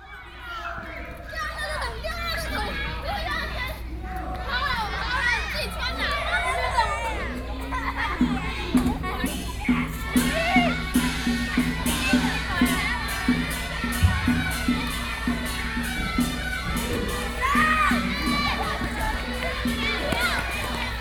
Xīnxīng Park, Taipei City - Glove puppetry
Taipei City, Taiwan, 3 November 2012, 20:27